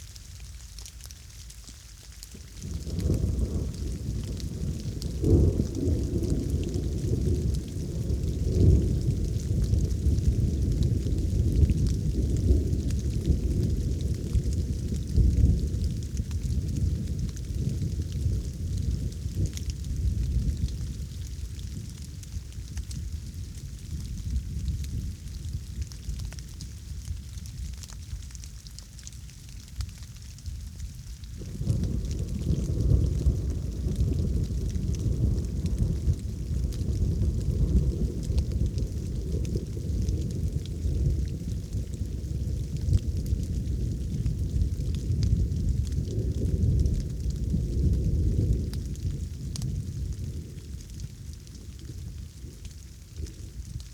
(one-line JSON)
{"title": "Breitbrunn, Deutschland - Thunderstorm with light rain", "date": "2022-08-10 20:00:00", "description": "The highlands here in the Nature Park are an area for extreme weather conditions. There has been no rain here for weeks!! The water levels in the entire area are at their lowest water level in years! Forest fires have been raging for weeks and making the situation worse. All we can do is hope for a rainy autumn and a snowy winter. The climate crisis is hitting this area with full force for the sixth year in a row.\nLocation: Nature Park Germany\nAugust 2022\nSetup:\nEarSight omni mic's stereo pair from Immersive Soundscapes\nRode Blimp\nAudio-Technika ATH-M50x headphone\nAbleton 11 suite\nFilmora 10\nIphone 8plus", "latitude": "50.01", "longitude": "10.72", "altitude": "333", "timezone": "Europe/Berlin"}